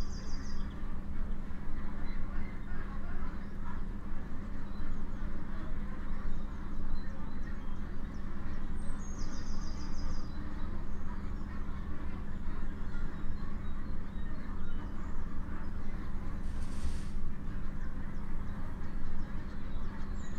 Fen Lane is a narrow corridor offered to wildlife running tight between the sterile silence of regimented, commercial orchards, and the putrid smell of an industrial poultry unit. Poultry can be heard incessantly over their heated, ventilated housing. In stark contrast, wild birds sing freely among the abandoned hazel coppice and large ivy-clad willow and oaks of the lane.